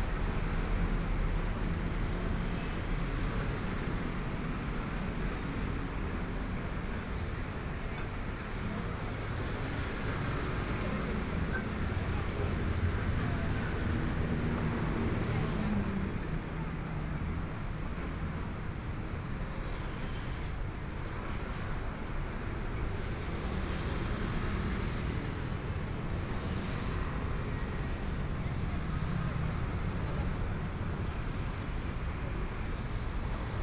Songjiang Rd., Zhongshan Dist. - soundwalk
Walking in the small streets, Traffic Sound, Binaural recordings, Zoom H4n+ Soundman OKM II